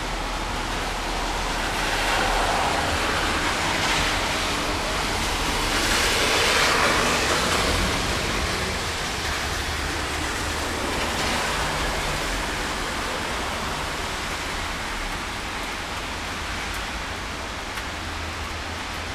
Zentrum-Süd, Leipzig, Deutschland - Karli-street in rain at night
Karli-street in rain at night from the balcony of the attic floor, cars passing by.
recorded with H2 Zoom
Sachsen, Deutschland, European Union